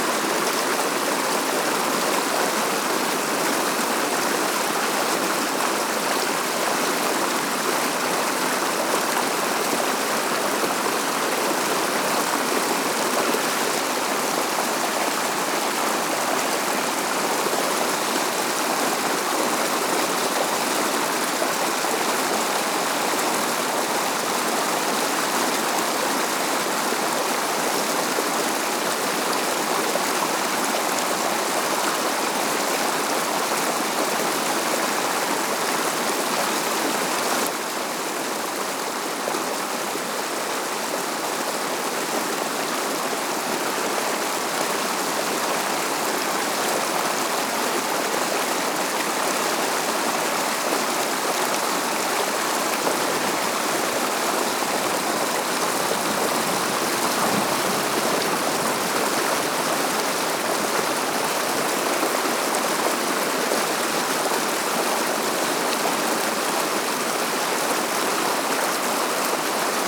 Bealtaine workshops with older people exploring the soundscape and landscape of the River Tolka as it flows through Griffith Park in Drumcondra, Dublin. Recordings were made through a series of walks along the river. The group reflected on these sounds through drawing and painting workshops in Drumcondra library beside the park